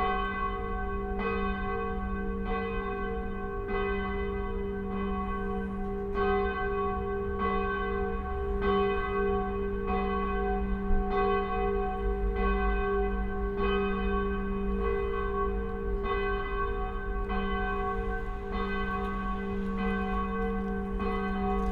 It is the 6 o'clock ringing at the Engelbecken in Kreuzberg .
The large open space is lovely to hear, and the St Michael church's ringing makes the whole site swing.
Deutschland, 23 November 2020, 17:58